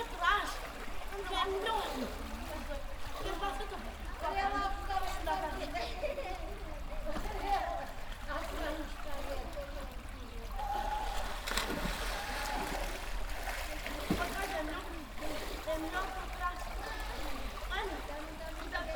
swimming_pool. water, people talking, kids, people swimming, birds